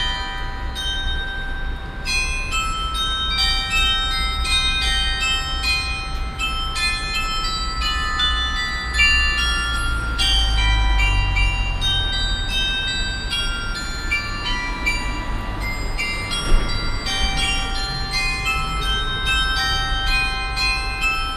cologne, glockengasse, 4711 gebäude, glockenspiel
traditionelles glockenspiel und verkehrsgeräusche am 4711 gebäude, früher nachmittag
soundmap köln/ nrw
project: social ambiences/ listen to the people - in & outdoor nearfield recordings